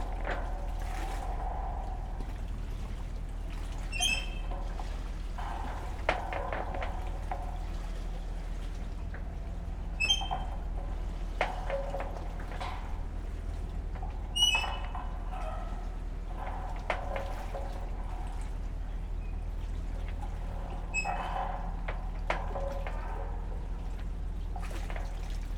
undulating chain...coast guard wharf at Donghae...